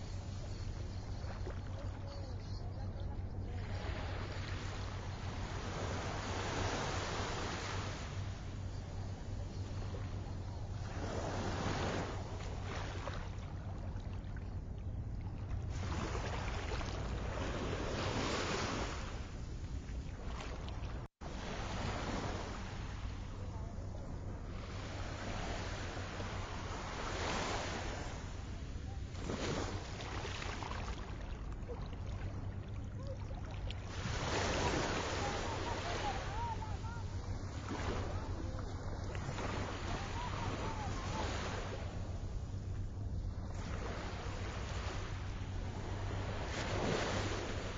Cra., Santa Marta, Magdalena, Colombia - Oleaje en Santa Marta
Sonido en playa de Santa Marta, Colombia. Grabación con EDIROL By Roland para proyecto de web cultural Agenda Samaria